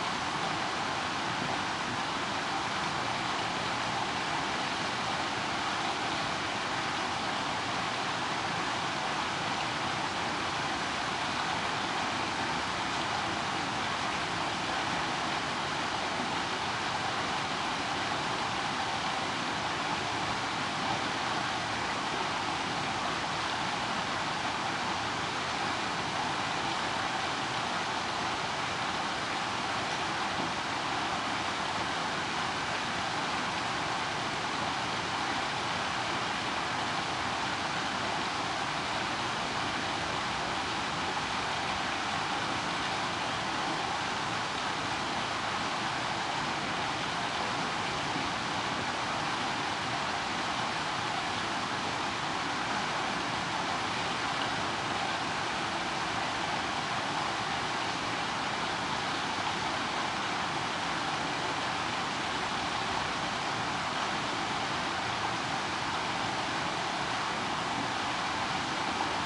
Kleine Dijk, Diksmuide, Belgium - Flanders Rain & Drone
Recorded with a Marantz PMD661 and a stereo pair of DPA 4060s
9 May 2017